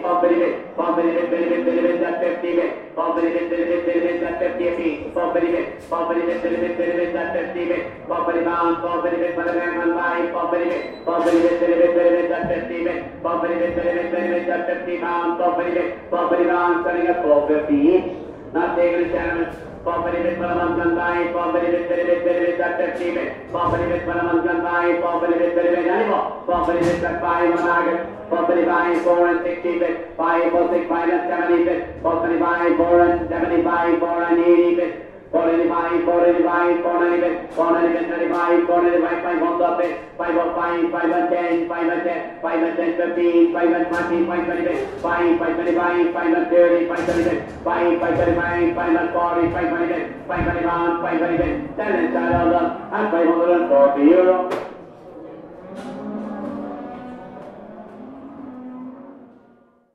Drumshanbo, Co. Leitrim, Ireland - The Sunken Hum Broadcast 75 - The Meditative Sounds of The Drumshanbo Cattle Mart - 16 March 2013
Took a stroll down to the ole' evening cattle mart in Drumshanbo. The auctioneers voice is like a meditative chant or a call to pray. I had never been to the mart before and soon noticed I was the only gal in the building (until the very end when a very well dressed nine year old mini-farmer came in with her dad).
We sat and watched this fella auctioning cattle for about an hour and he didn't stop going once. The whole time he does his chant his leg beats up and down to the rhythm of his voice. Eventually I felt like getting up and doing a little dance. But I constrained myself.
Recorded with a Zoom H4